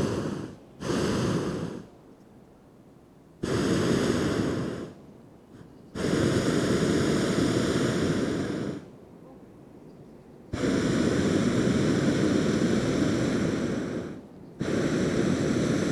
Lithuania, Utena, hot air baloon over forest
sounds from Lithuanian XIX hot air balloons championship
8 July 2011